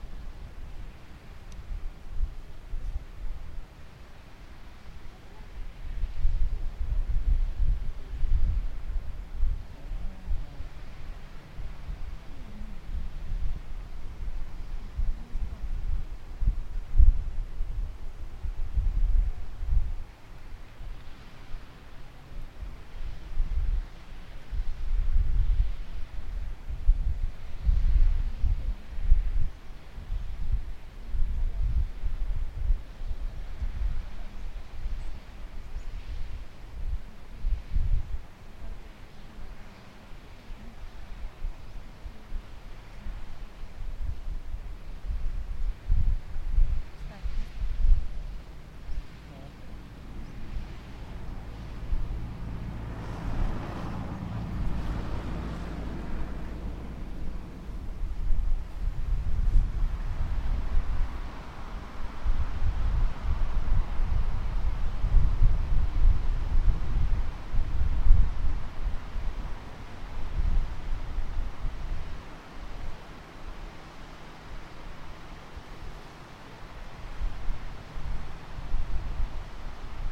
Windy soundscape, on the side of the Transfagarasan road, second highest highway in Romania
15 July 2011, 13:00